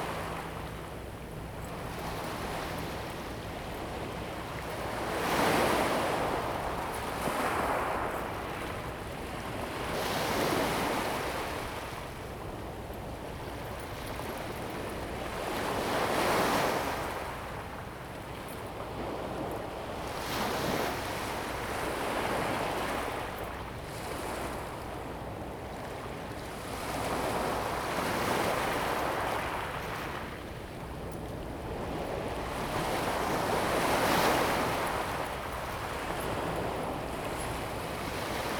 Kanding, Tamsui Dist., New Taipei City, Taiwan - at the seaside
at the seaside, Sound waves, Aircraft flying through
Zoom H2n MS+XY + H6 XY
5 April 2016